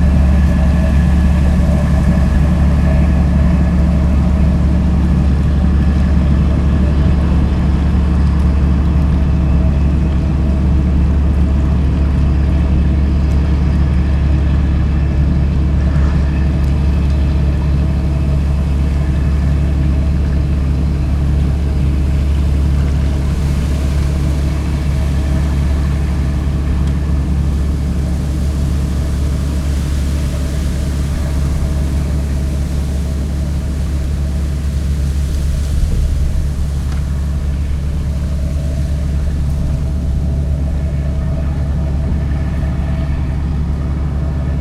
Nagranie przystani promowej oraz odpływającego promu w Świbnie.
Poland